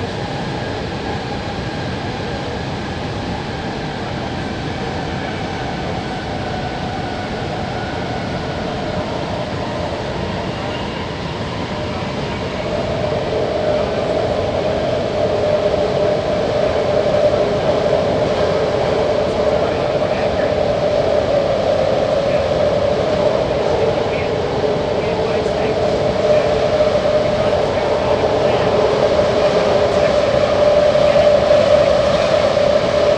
approaching West Oakland Bart /subway/ station and a ride through a tube under the SF Bay towards The San Francisco